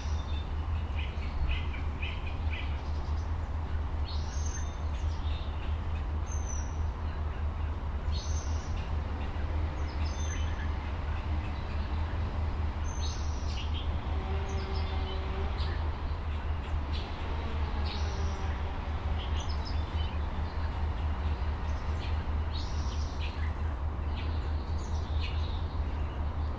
Green Lane, La Canada, CA - Midmorning Suburban Sounds
Midmorning Birdsong, including a Spotted Towhee, and construction sounds in a suburban development on a south slope of the San Gabriel Mountains in California.
Schoeps MK2 omni capsules splayed out 90 degrees into Nagra Seven.